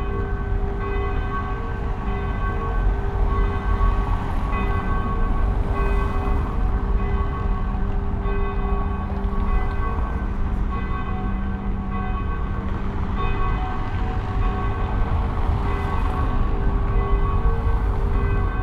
Leuschnerdamm, Berlin, Deutschland - engelbecken 6 oclock ringing
It is the 6 o'clock ringing at the Engelbecken in Kreuzberg .
The large open space is lovely to hear, and the St Michael church's ringing makes the whole site swing.